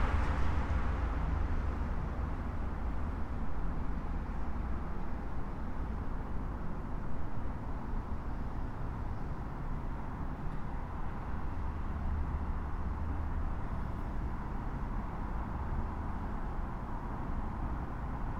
Lyeninski Rayon, Minsk, Belarus - under the bridge
7 August 2016, ~16:00